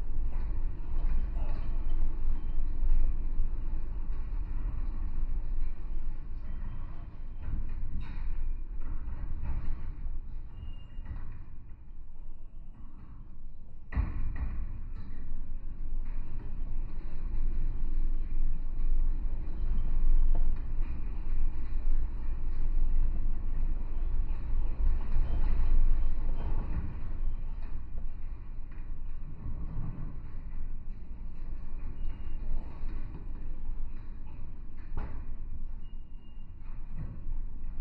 Antakalnis, Lithuania, the fence at meadow
high metallic fence at the side of the meadow.
31 May 2020, 14:05